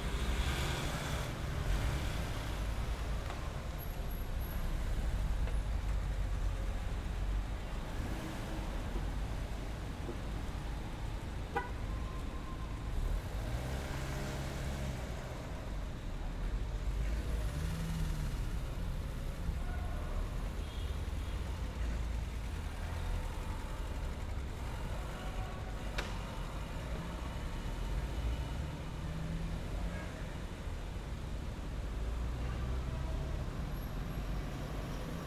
Cl., Medellín, La Candelaria, Medellín, Antioquia, Colombia - Entre las lomas y Palmas
Se escucha el flujo de vehículos en hora pico entre la Loma el Encierro y San Julián que se dirijen hacia la avenida Las Palmas.
Valle de Aburrá, Antioquia, Colombia, 12 September 2022, ~19:00